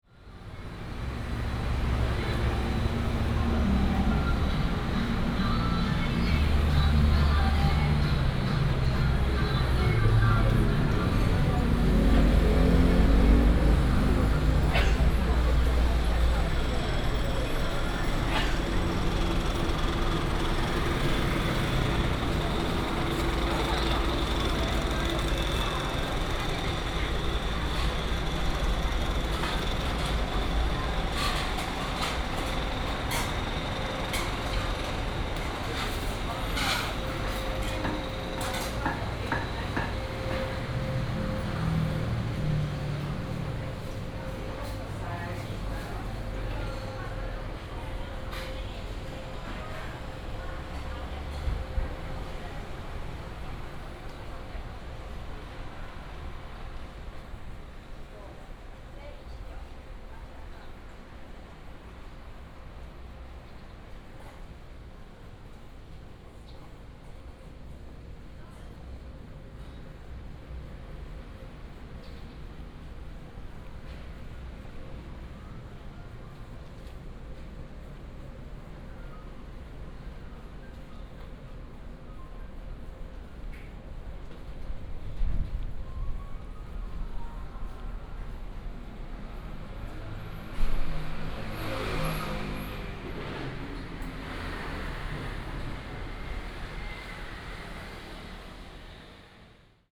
嘉義中央第一商場, Chiayi City - Walk in the store street
Walk in the store street, Traffic sound
West District, 中央第一商場, 18 April 2017, ~13:00